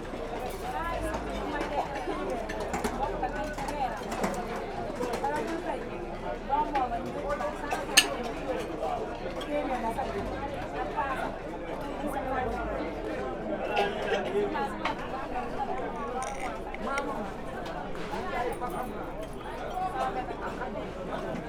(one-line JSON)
{"title": "Marche Kermel, Rue de Essarts, Dakar, Senegal - food market", "date": "2020-02-26 15:06:00", "latitude": "14.67", "longitude": "-17.43", "altitude": "18", "timezone": "Africa/Dakar"}